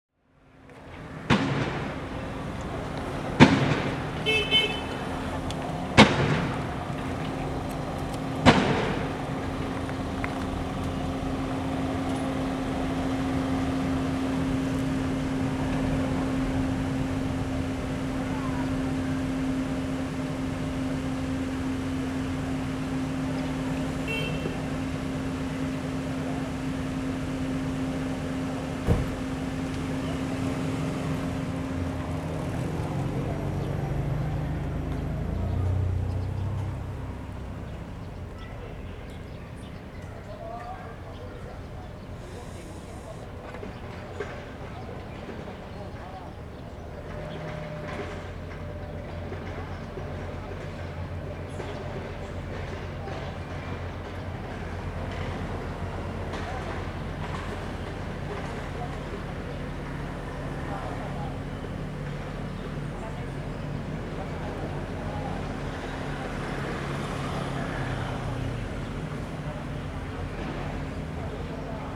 Fengshan Station - Construction noise
Square in front of the station, Sony Hi-MD MZ-RH1, Rode NT4
高雄市 (Kaohsiung City), 中華民國, 25 February 2012, ~14:00